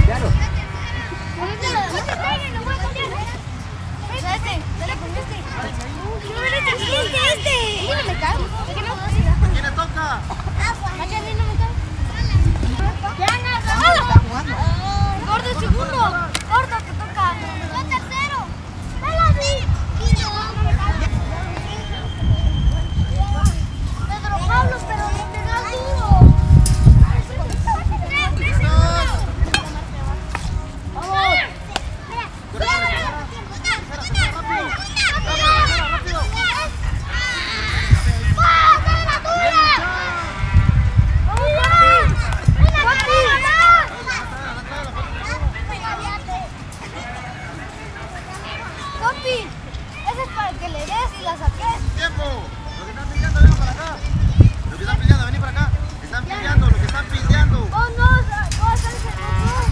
Little league game, el complejo deportivo
A game of baseball
Guatemala, 10 July 2010